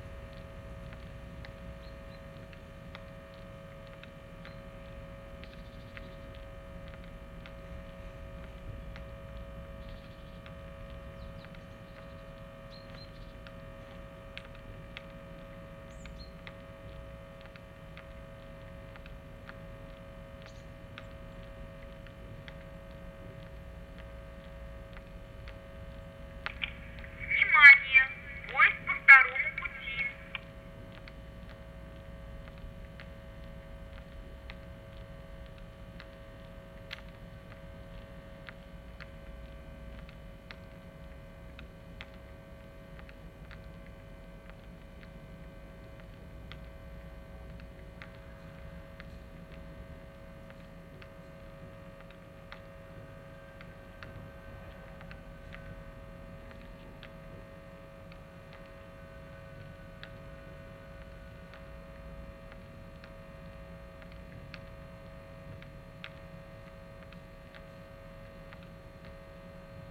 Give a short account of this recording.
This fragment conteins female voice warning about trains coming by the way number one and the way number two and the sound of passing trains. Used Zoom H2n and Roland CS-10EM stereo microphone